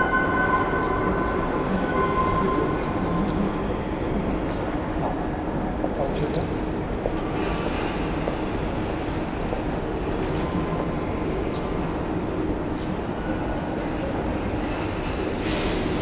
Rondo Kaponiera, underground tram station - tram station ambience
the tram station under Kaponiera traffic circle is a big, hollow, concrete space. Rather brutal space with a lot of reverberation. recorded on one of the platforms. the high-pitched sound comes from one of the ticket machines. escalator wail, traffic above, a few commuters passing by. (roland r-07)
Poznań, Poland, 15 March